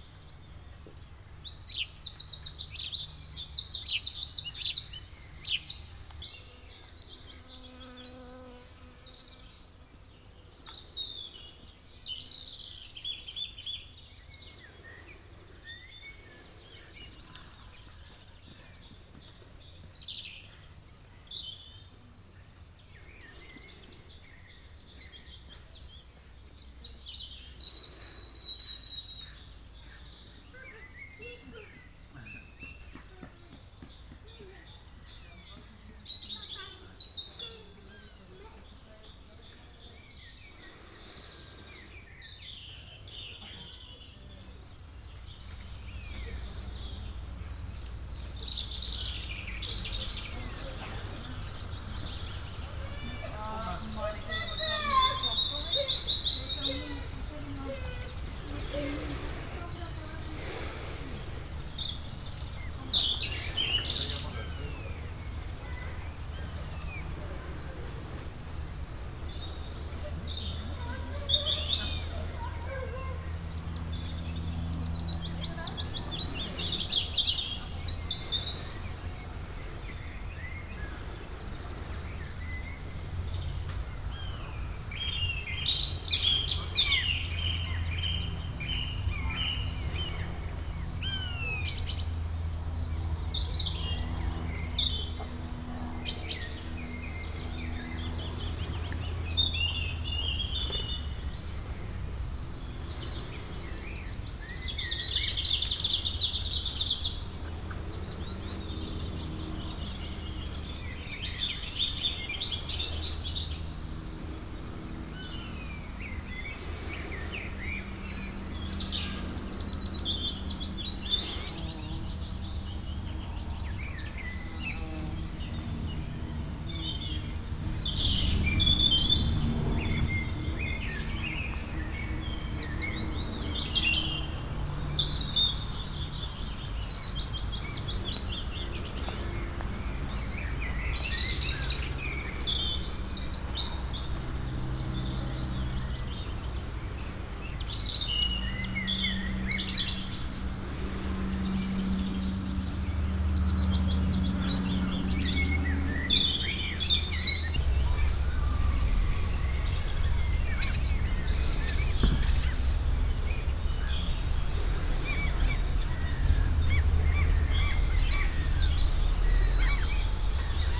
near a bee best, passengers waklking by in the distance, birds, a plane flies by
soundmap international: social ambiences/ listen to the people in & outdoor topographic field recordings